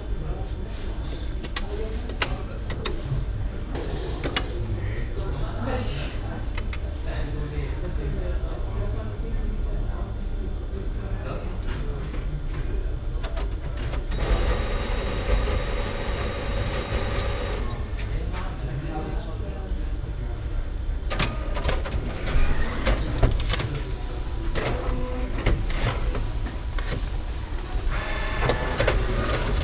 bankautomat, kontoauszugdrucker und kundenbetrieb, morgens
soundmap nrw:
social ambiences/ listen to the people - in & outdoor nearfield recording
siebenmorgen, sparkasse